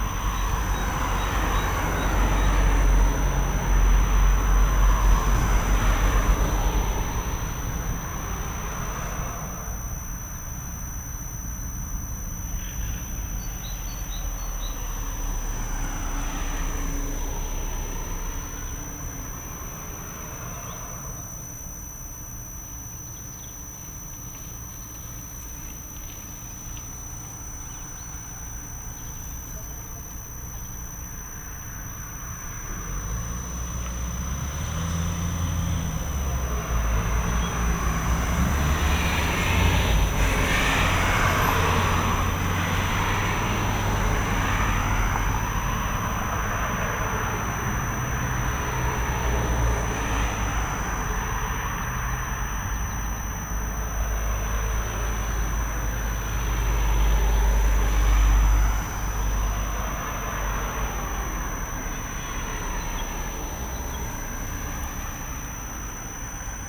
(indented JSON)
{
  "title": "Utena, Lithuania, at the street(air conditioner)",
  "date": "2021-04-29 18:30:00",
  "description": "standing at the street under some strange sound emitting air conditioner. recorded with sennheiser ambeo headset",
  "latitude": "55.51",
  "longitude": "25.61",
  "altitude": "112",
  "timezone": "Europe/Vilnius"
}